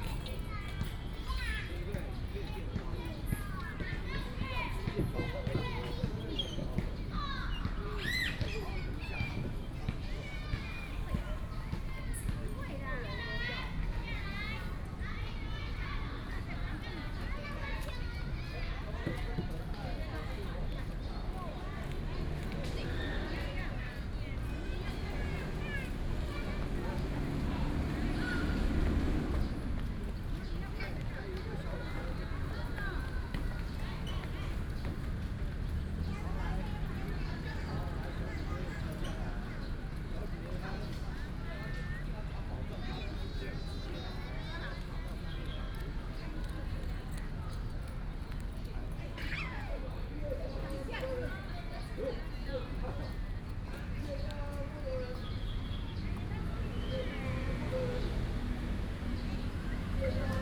板橋石雕公園, 板橋區, New Taipei City - in the Park
Children Playground, Footsteps